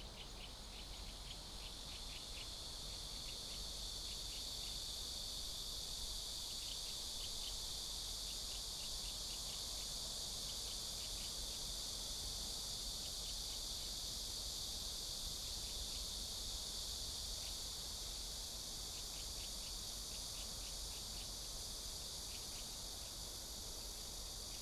壯圍鄉東港村, Yilan County - Birdsong sound
In windbreaks, Near the sea, Cicadas sound, Birdsong sound, Small village
Sony PCM D50+ Soundman OKM II